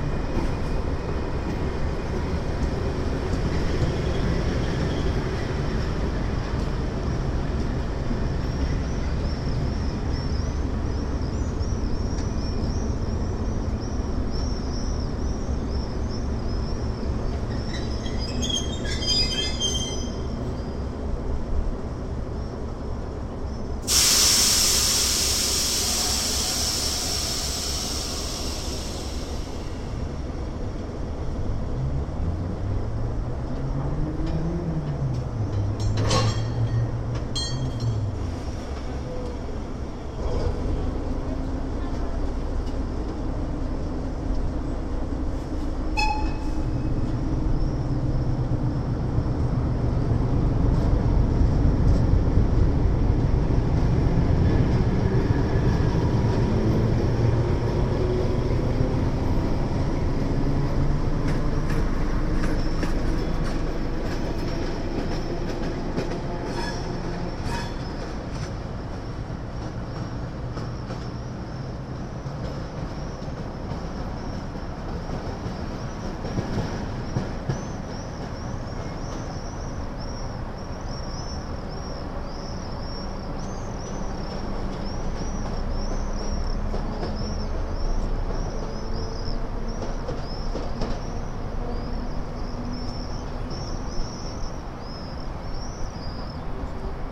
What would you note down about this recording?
Pedestrian bridge above the Smichov Railway Station. The composition of the locomotive and the flock of swifts, an important part of the Prague soundscape. The bridge connects Smíchov and Radlice district and in the middle is the stairs to the perron where few local trains are departure to Hostivice. In distance hums the highway and Mrázovka Tunel.